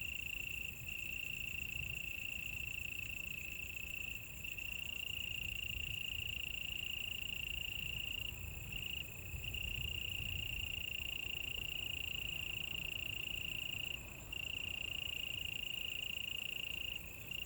桃米里, Puli Township, Taiwan - Insects sounds
Insects sounds, In the bamboo forest edge
Zoom H2n MS+XY